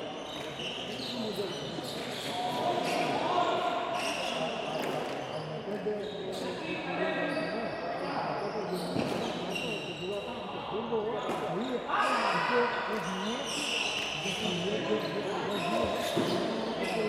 Carrer dAlmoines, Bellreguard, Valencia, Spain - Partida de Pilota
Recorded on the internal mics of a Zoom H2n.
The last few minutes of a match of Pilota.